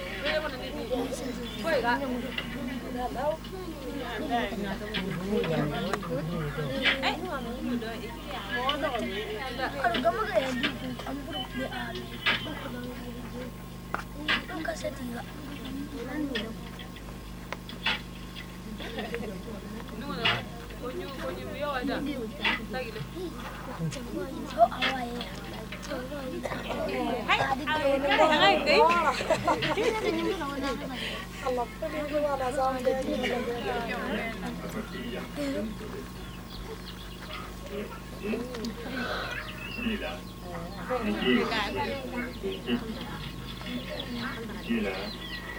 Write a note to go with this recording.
un an aprés le tournage anta une femme entre deux monde retour à dinangourou pour visionner le film, avec anta